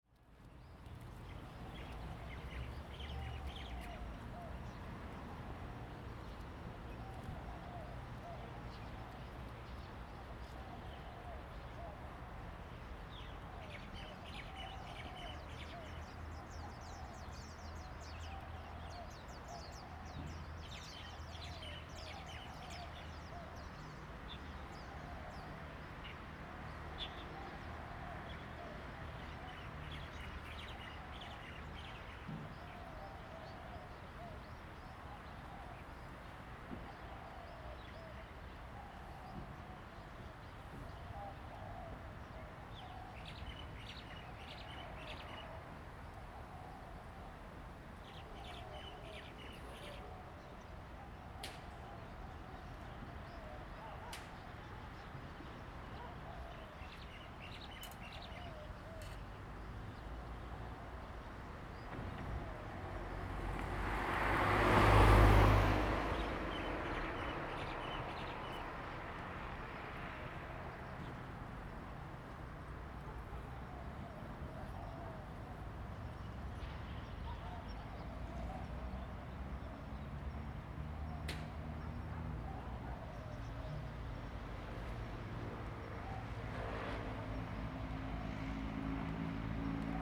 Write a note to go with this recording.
In front of a small temple, Traffic Sound, Birds singing, Zoom H2n MS+XY